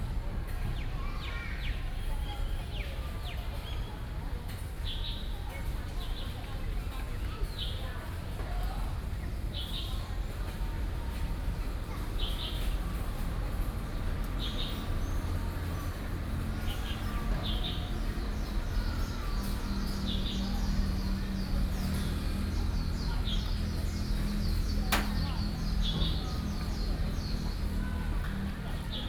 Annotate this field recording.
in the Park, Sony PCM D50 + Soundman OKM II